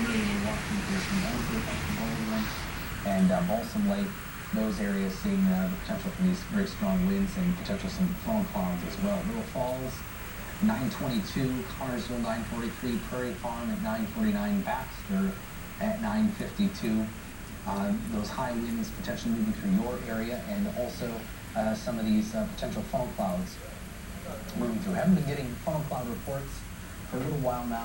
Minneapolis, USA
storm over lake calhoun, minneapolis- tornado coming
18 July, 20:30